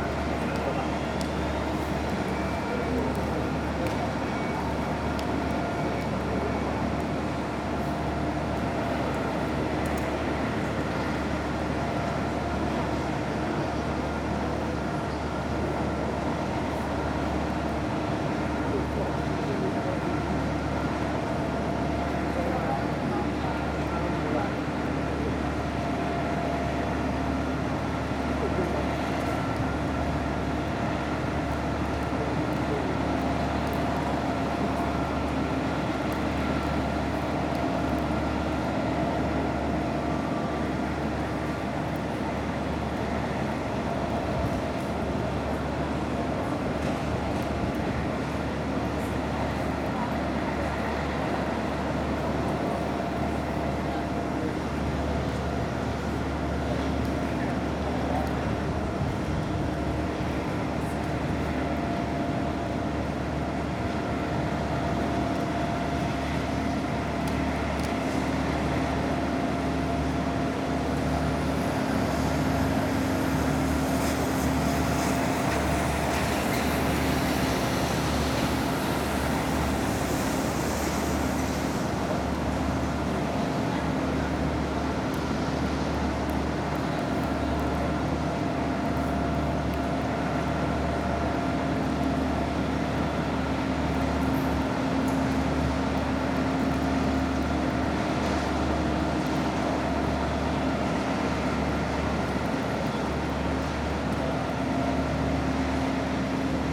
Porto, Francisco de Sá Carneiro Airport (OPO), outside of the departure terminal - morning runway ambience
waiting with other passengers for getting access to the plane. swish of the plane engines, hum of all the different machinery and vehicles operating on the runway. drops of water reverberated of the terminal walls.